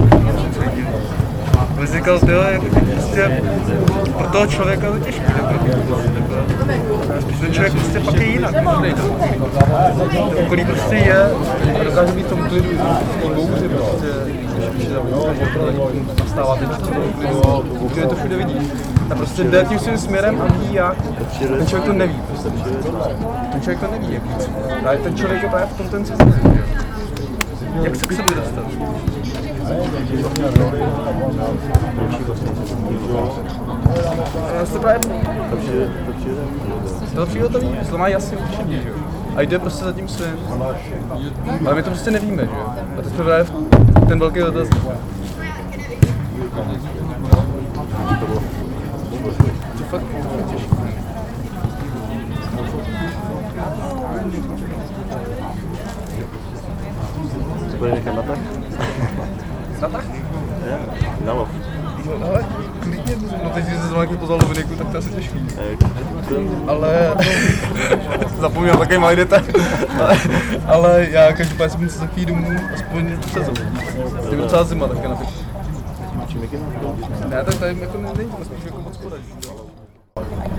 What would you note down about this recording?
open air pub in the middle of small garden colony.